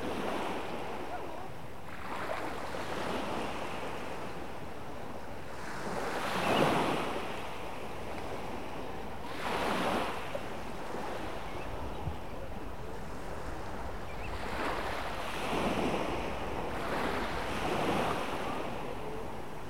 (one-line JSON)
{"title": "Trégastel, Bretagne.France. - Evening waves on the beach [grève blanche]", "date": "2011-08-05 22:30:00", "description": "Tregastel, Grève blanche.Il fait déjà nuit.vagues sur la plages.Quelques voix.\nTregastel Grève blanche Beach.Night.Somes voices.", "latitude": "48.83", "longitude": "-3.52", "altitude": "11", "timezone": "Europe/Paris"}